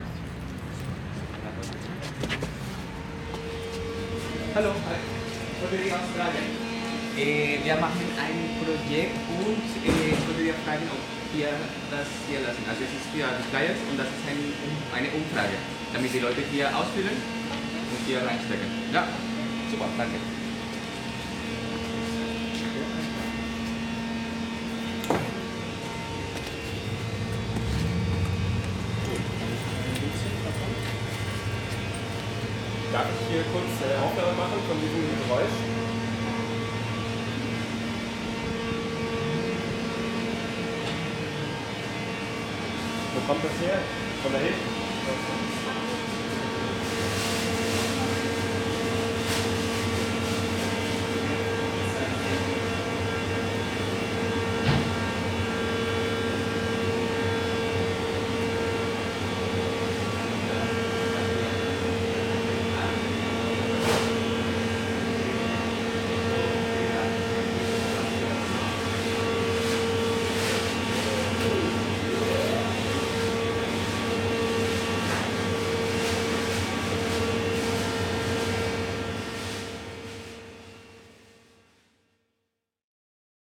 Prinzenallee, Soldiner Kiez, Wedding, Berlin, Deutschland - Prinzenallee, Berlin - In the bakery at the corner Biesenthaler Straße
Beim Flyer-Verteilen in der Bäckerei, Prinzenallee Ecke Biesenthaler Straße.
Berlin, Germany